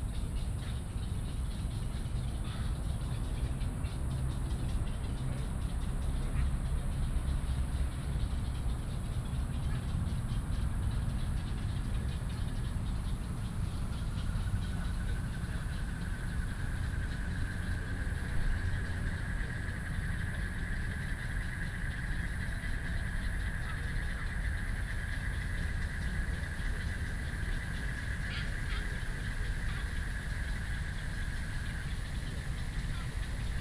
大安森林公園, Taiwan - in the Park
in the Park, Bird calls, Frogs chirping, Traffic noise